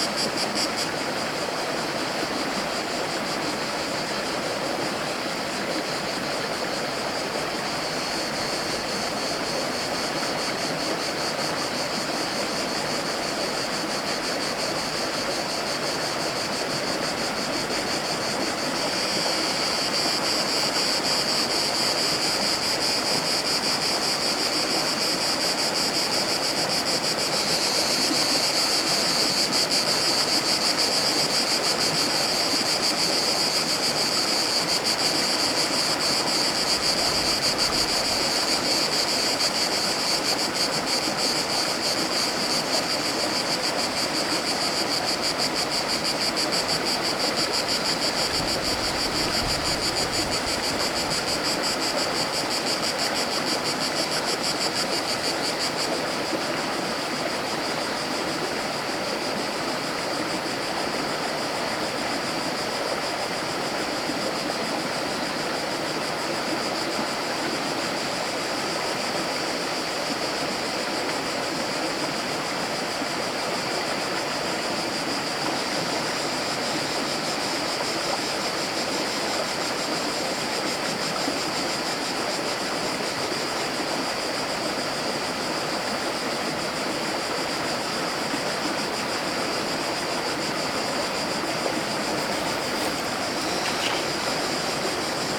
Fiume Sosio e cicalìo in un pomeriggio d'Estate